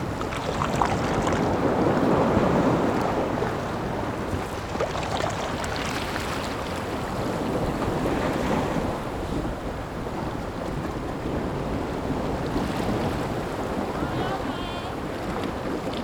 老梅海岸, Shimen Dist., New Taipei City - The sound of the waves
New Taipei City, Taiwan, 25 June